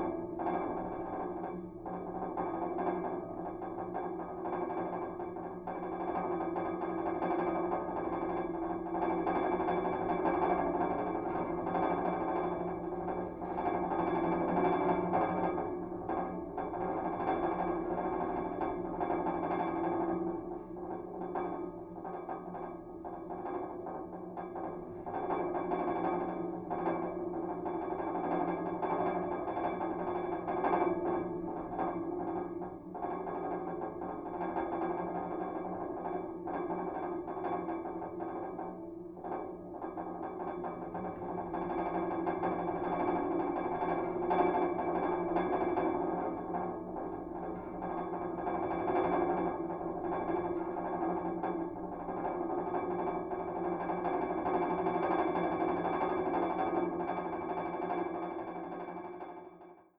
Autobahn bridge, Köln, Deutschland - railing vibrations
Rodenkirchner Autobahnbrücke / highway bridge, vibration in railing
(Sony PCM D50, DIY contact mics)